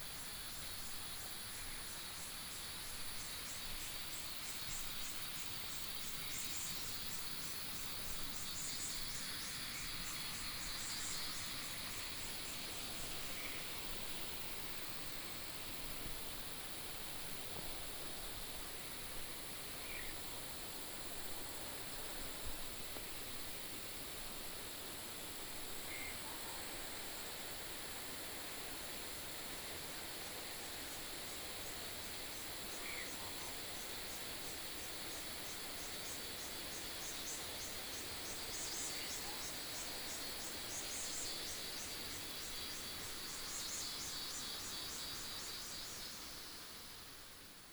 頂草南, 埔里鎮桃米里 - Next to the river
Bird sounds, Cicadas cry, The sound of the river, Frog sounds